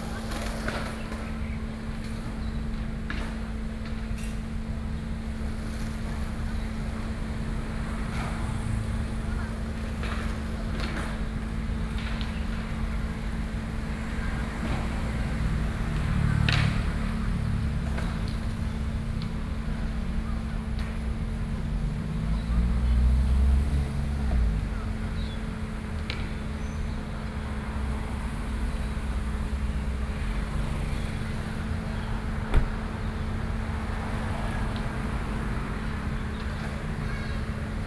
Chiayi - Skateboarding youth
in the Park, Skateboarding youth, Sony PCM D50 + Soundman OKM II